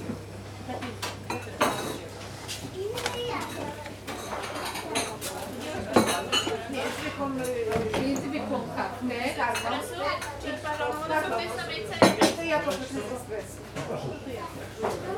Kornik, imbis/café in front of the castle - busy café
café full with visitors. people making orders, going in and out of the place, clank of cutlery, puff of kitchen machinery.